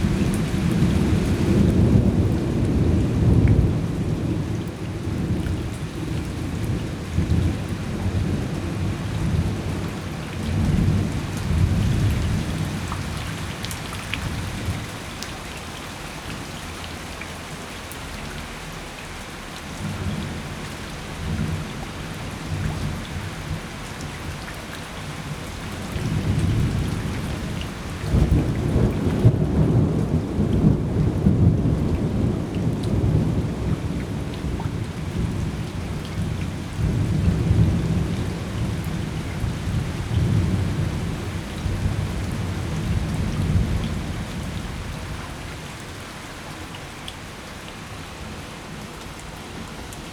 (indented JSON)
{
  "title": "Yonghe, New Taipei City - Heavy thundery showers",
  "date": "2010-08-12 15:19:00",
  "description": "Heavy thundery showers, Sony ECM-MS907, Sony Hi-MD MZ-RH1",
  "latitude": "25.00",
  "longitude": "121.52",
  "altitude": "20",
  "timezone": "Asia/Taipei"
}